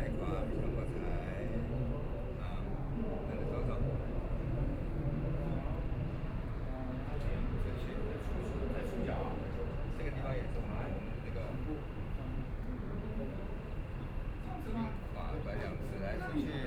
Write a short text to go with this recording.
Frogs sound, Insects sound, Birdsong, Dogs barking, A group of people are practicing T'ai chi ch'uan, Traffic Sound, Aircraft flying through